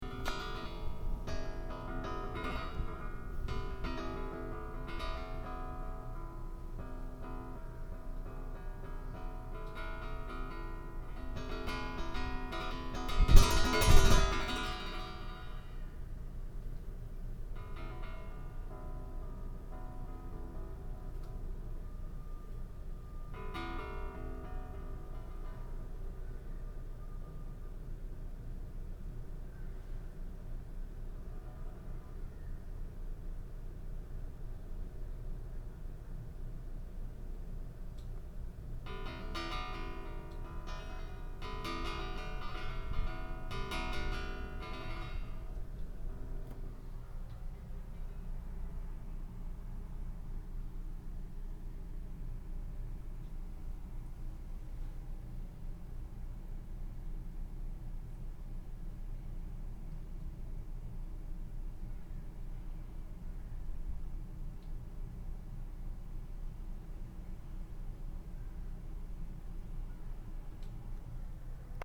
Mackintosh-Corry Hall, University Ave, Kingston, ON, Canada - Metal shelf in room E318, played by wind drafts
Recording of metal shelf in office, sounded by wind draft from open window. Edirol R-09.
29 June, 12:48